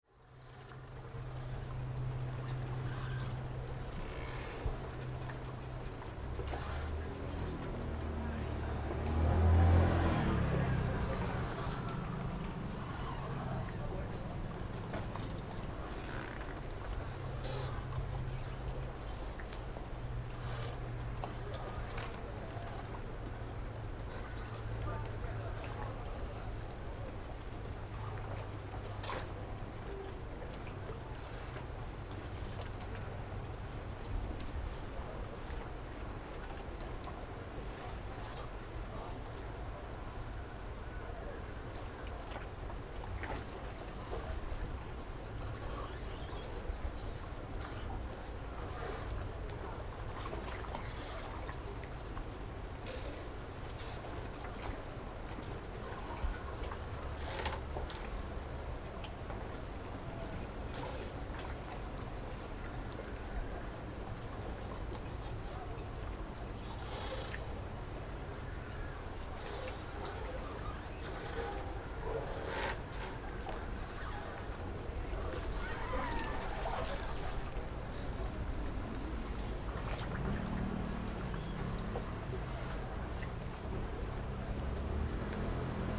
風櫃西港漁港, Penghu County - In the fishing port pier
Small fishing port, Small fishing village
Zoom H6 +Rode NT4